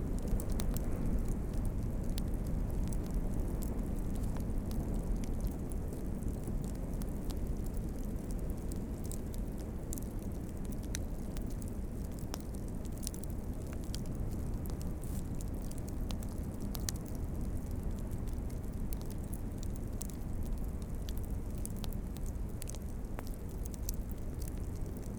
Royal National Park, NSW, Australia - campfire by marley lagoon
a small fire crackling underneath the tea tree's.